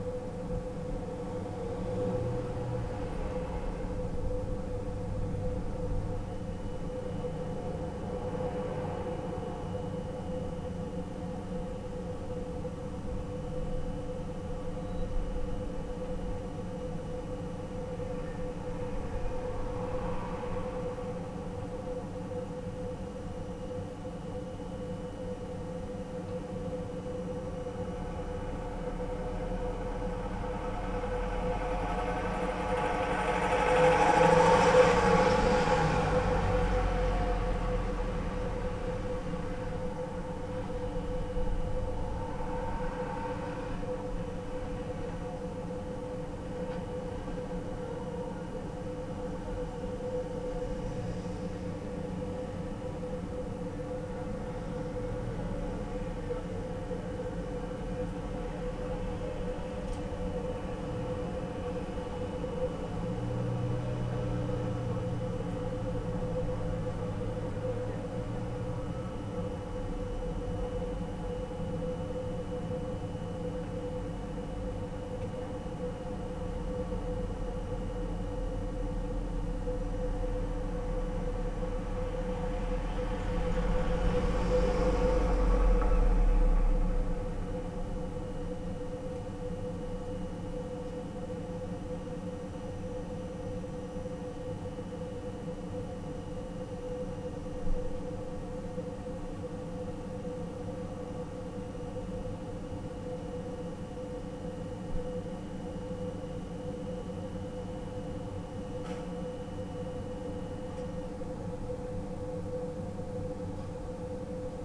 Szymanowskiego, Lidl store - locked in a compartment
recorder was locked in a smal plastic trunk of a scooter, which was parked on a store parking lot nearby AC units. they turn off for a little while and you can hear the ambience of the parking lot. later in the recording the AC units kick back on. (roland r-07 internal mics)